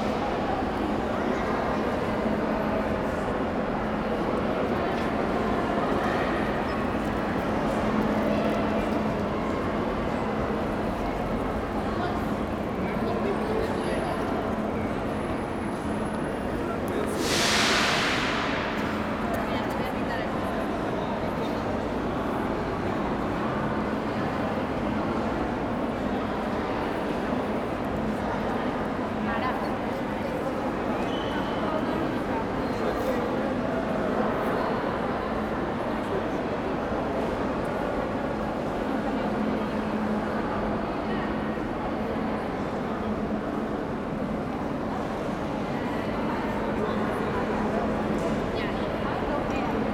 Porto, São Bento Train Station, main hall - Porto São Bento